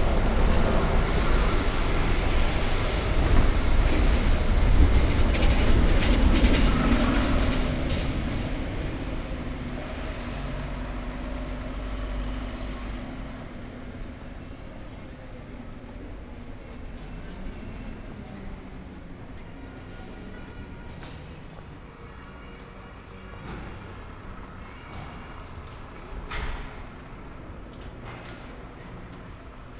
"Marché en plein air le samedi après-midi aux temps du COVID19" Soundwalk
Saturday March 28th 2020. Walking San Salvario district and crossing the open-air market of Piazza Madama Cristina
Eighteen days after emergency disposition due to the epidemic of COVID19.
Start at 3:03 p.m. end at 3:35 p.m. duration of recording 31'34''
The entire path is associated with a synchronized GPS track recorded in the (kmz, kml, gpx) files downloadable here: